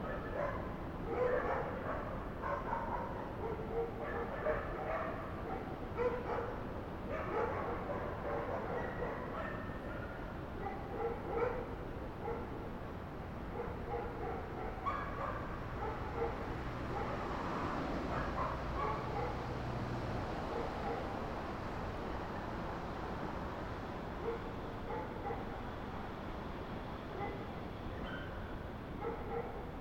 This is a recording in a forrest near to Loncoyén. I used Sennheiser MS microphones (MKH8050 MKH30) and a Sound Devices 633.